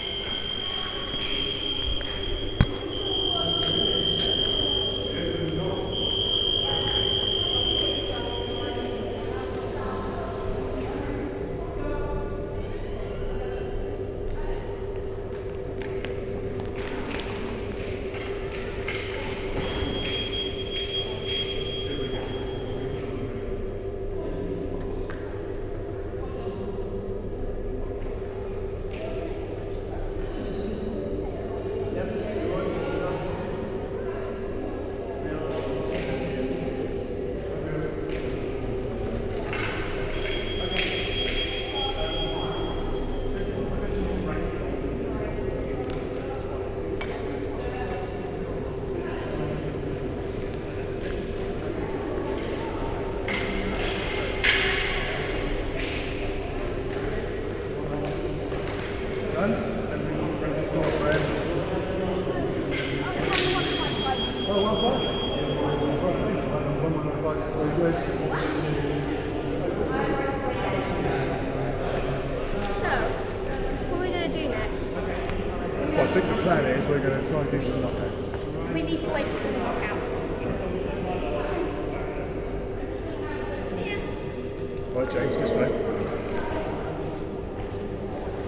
Radley, Abingdon, UK - Fencing ambience with contact alarms
In the last day of fencing course, the use of contact alarms are introduced. Therefore you can hear several bouts going on simultaneously. Appears to build in intensity of combat/competition